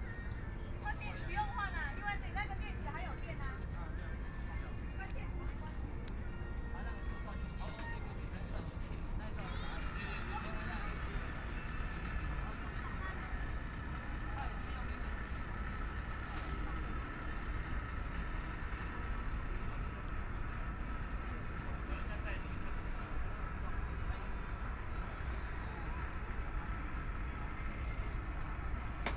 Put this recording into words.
The crowd, Riverside Park Plaza, Start fishing noise, Binaural recordings, Sony PCM D50 + Soundman OKM II